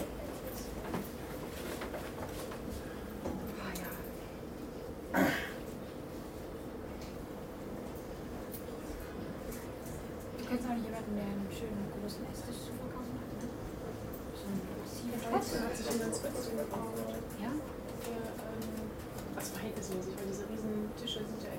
cologne, tram - Köln, tram
Tram ride from station Eifelstrasse to station Rudolfplatz. Tram changes to subway after 2 station.
recorded july 4th, 2008.
project: "hasenbrot - a private sound diary"